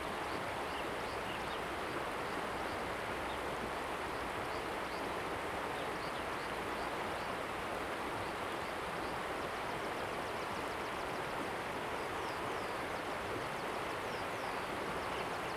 太麻里鄉金崙溪, Jinlun, Taimali Township - Stream sound
stream, On the embankment, Bird call
Zoom H2n MS+XY